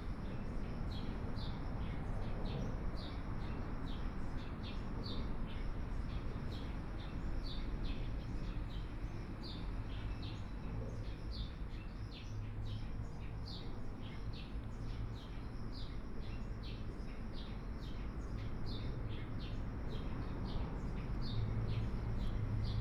{
  "title": "宜蘭市南津里, Yilan County - under the railroad tracks",
  "date": "2014-07-26 11:09:00",
  "description": "In the bottom of the track, Traffic Sound, Birds, Trains traveling through\nSony PCM D50+ Soundman OKM II",
  "latitude": "24.72",
  "longitude": "121.77",
  "altitude": "8",
  "timezone": "Asia/Taipei"
}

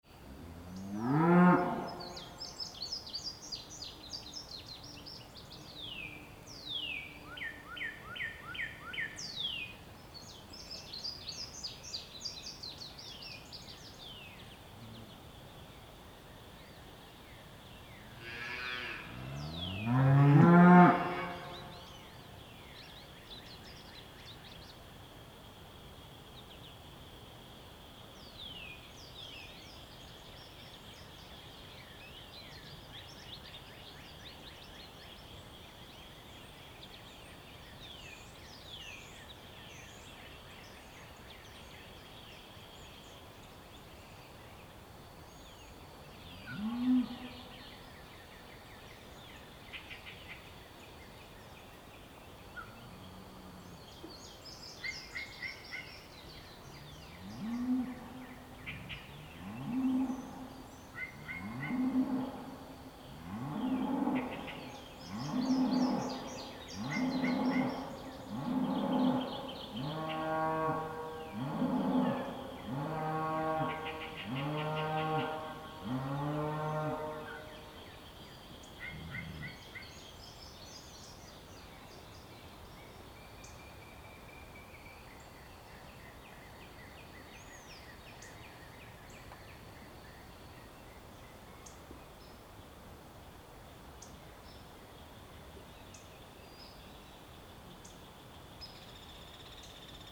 {
  "title": "Missouri, USA - Bulls and cows in a field in Missouri, USA",
  "date": "2013-05-07 12:00:00",
  "description": "Some bulls and cows calling and mooing in the countryside of Missouri. Birds and light wind in the trees in background. Sound recorded by a MS setup Schoeps CCM41+CCM8 Sound Devices 788T recorder with CL8 MS is encoded in STEREO Left-Right recorded in may 2013 in Missouri, close to Bolivar (an specially close to Walnut Grove), USA.",
  "latitude": "38.42",
  "longitude": "-92.47",
  "altitude": "219",
  "timezone": "America/Chicago"
}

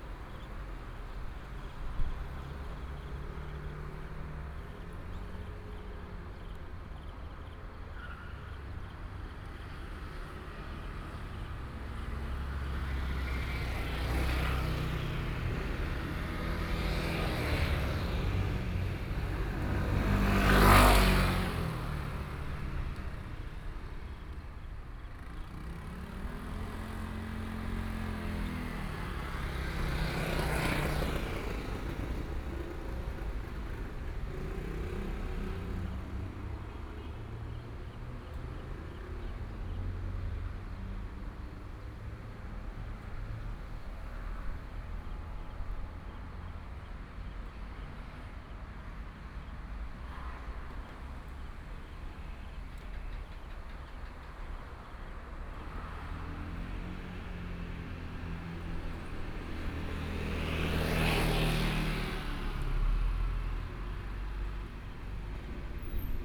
{
  "title": "宜蘭市小東里, Yilan County - Traffic Sound",
  "date": "2014-07-22 14:11:00",
  "description": "Traffic Sound, Next to the railway\nSony PCM D50+ Soundman OKM II",
  "latitude": "24.76",
  "longitude": "121.76",
  "altitude": "8",
  "timezone": "Asia/Taipei"
}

